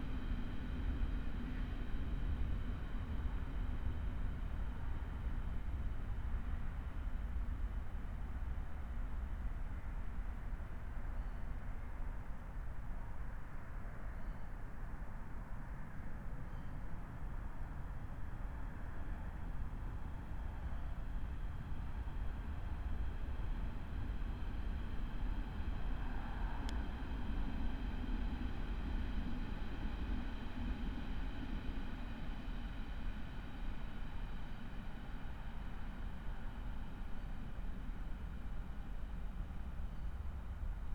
Berlin, Buch, Wiltbergstr. - Remote audio stream from woodland beside the silent River Panke
Remote streaming in the woodland beside the silent River Panke, which is canalised here and fairly narrow. Most of the sound is autobahn traffic. Trains pass regularly. These are constant day and night. In daylight there should also be song birds, great tits, blackbirds, plus nuthatch and great spotted woodpecker.